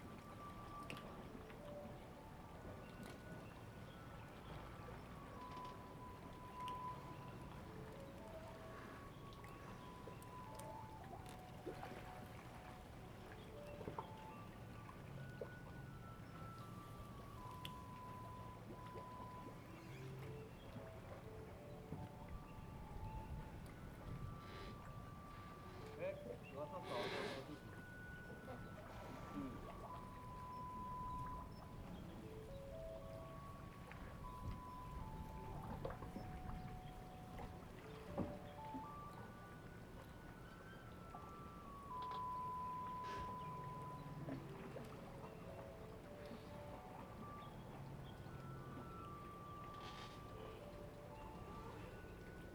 鼻頭漁港, Hengchun Township - At the fishing port
At the fishing port, Sound of the Tide, Birds sound, traffic sound, Garbage truck music sound
Zoom H2n MS+XY
Pingtung County, Taiwan, April 2018